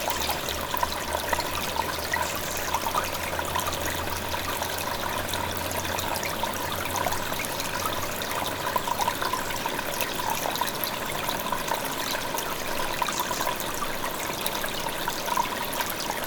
Steinbach, babbling brook, WLD
Germany, 18 July